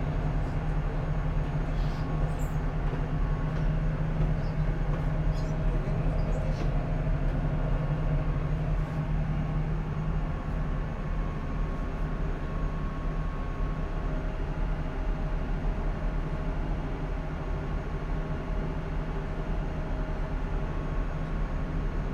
Croatia, Ferry - Rapska Plovidba
Sony PCM-D50 wide